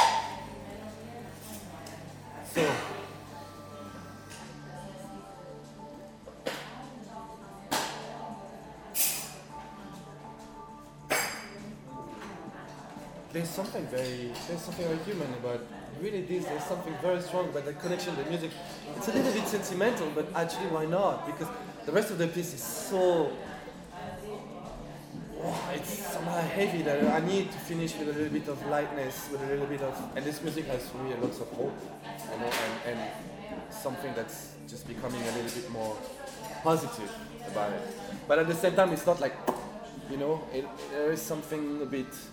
Düsseldorf, Flingern, Ackerstr, Cafe Record, artist conversation - düsseldorf, flingern, ackerstr, cafe record, artist conversation
artist conversation while coffe machine and dish sounds in the cafe
soundmap nrw: social ambiences/ listen to the people in & outdoor topographic field recordings